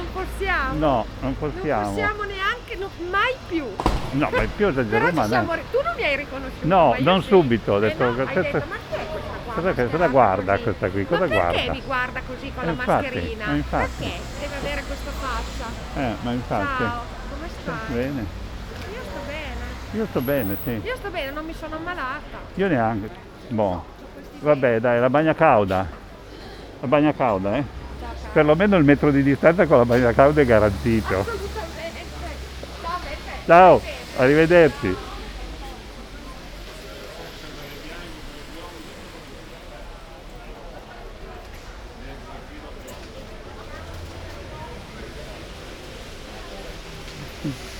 Ascolto il tuo cuore, città. I listen to your heart, city. Several chapters **SCROLL DOWN FOR ALL RECORDINGS** - “Outdoor market on Thursday in the square at the time of covid19” Soundwalk
“Outdoor market on Thursday in the square at the time of covid19” Soundwalk
Chapter CIV of Ascolto il tuo cuore, città. I listen to your heart, city.
Thursday, June 11th 2020. Walking in the outdoor market at Piazza Madama Cristina, district of San Salvario, Turin ninety-thre days after (but day thirty-nine of Phase II and day twenty-six of Phase IIB and day twaenty of Phase IIC) of emergency disposition due to the epidemic of COVID19.
Start at 11:24 a.m., end at h. 11:52 a.m. duration of recording 18’25”, full duration 28’15” *
As binaural recording is suggested headphones listening.
The entire path is associated with a synchronized GPS track recorded in the (kml, gpx, kmz) files downloadable here:
This soundwalk follows in similar steps to similar walk, on Thursday too, April 23rd Chapter LIV of this series of recordings. I did the same route with a de-synchronization between the published audio and the time of the geotrack because:
Piemonte, Italia, 11 June